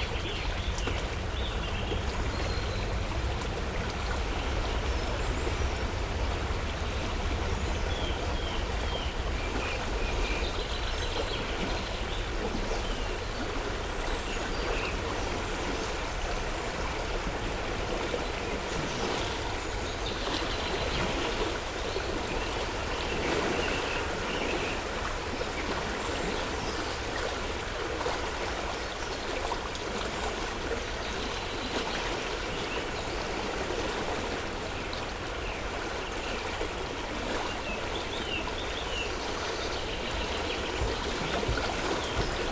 Franclens, France - The Rhône river
The Rhone is a majestic river flowing from Switzerland to a place called Delta in the Camargue area. This river is especially known for its urban course in the Rhone valley, marked by an intense industrial activity and an highly developed business using skippers by river. In Franclens village where we were, Rhone river is located upstream of Lyon, not navigable and in the forest.
However it's not quiet. Contrariwise, water is dominated by the hydroelectric dams activity ; for us it's the Genissiat dam. During this recording, the Rhone underwent an enormous dump. Water violently leaves the bed. Unlike a filling, this activity establishes considerable turbulences and noise. It's a tormented atmosphere. But, at the heart of nature and although waters are very lively, it's still and always a soothing recording.
Le Rhône est un fleuve majestueux prenant sa source en Suisse et débouchant dans le Delta en Camargue.